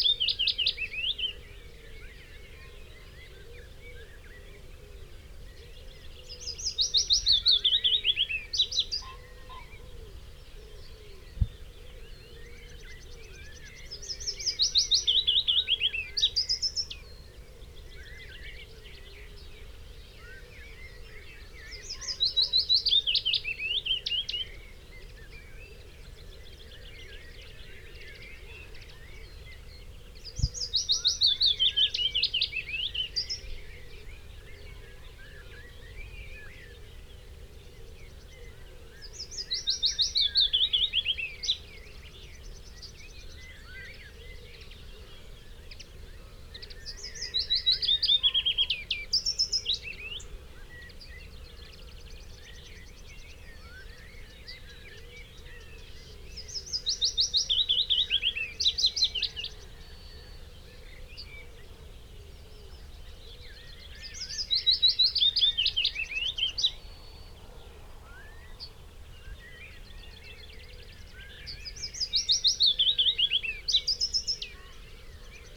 Green Ln, Malton, UK - willow warbler song soundscape ...
willow warbler song soundscape ... Luhd PM-01 binaural mics in binaural dummy head on tripod to Olympus LS 14 ... bird calls ... song ... from ... yellowhammer ... whitethroat ... pheasant ... blackbird ... chaffinch ... song thrush ... crow ... wood pigeon ... background noise ...